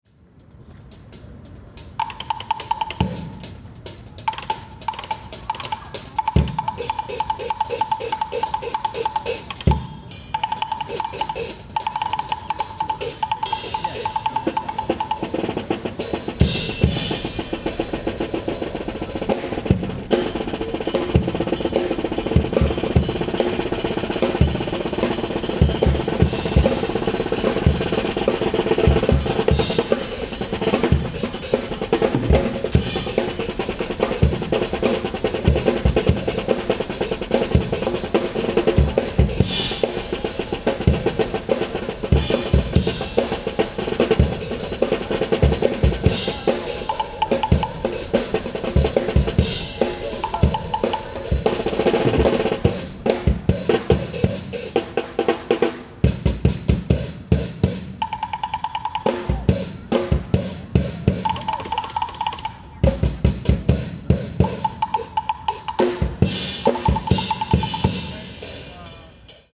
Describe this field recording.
Street artist drummers in Central Park near the bandstand. Recorded with a Sound devices Mix Pre 3 and 2 Beyer lavaliers.